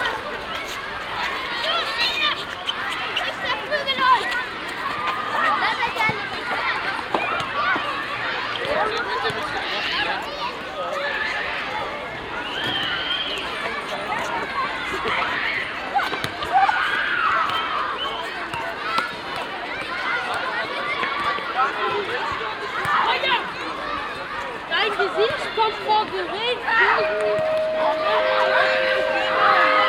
Neustädter Str., Bielefeld, Deutschland - schoolyard
schoolyard noise, talks etc.